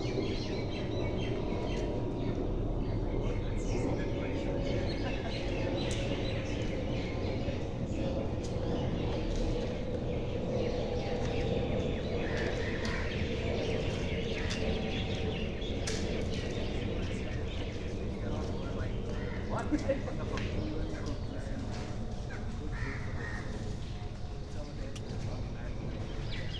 00:00 Film and Television Institute, Pune, India - back garden ambience
operating artist: Sukanta Majumdar
Maharashtra, India, 26 February 2022